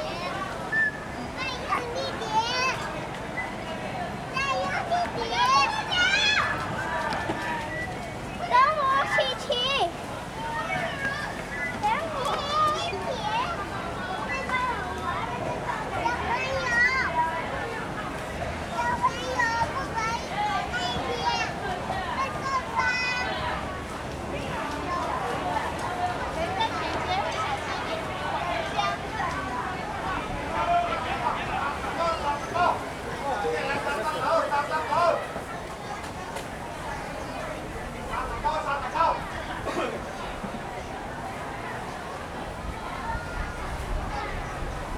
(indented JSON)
{
  "title": "Ln., Dayong St., Zhonghe Dist., New Taipei City - Evening market",
  "date": "2012-01-21 16:43:00",
  "description": "Traditional market and children's playground\nSony Hi-MD MZ-RH1 +Sony ECM-MS907",
  "latitude": "24.99",
  "longitude": "121.52",
  "altitude": "15",
  "timezone": "Asia/Taipei"
}